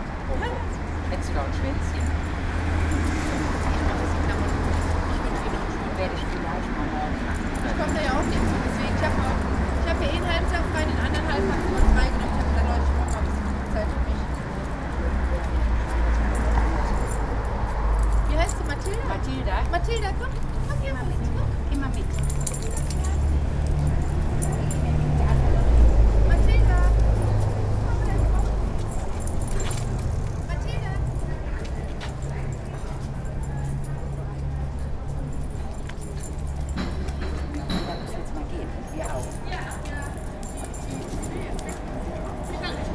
zwei hundebesitzerinnen im gespräch, verkehrsgeräusche der aachenerstrasse, morgens
soundmap nrw: topographic field recordings - social ambiences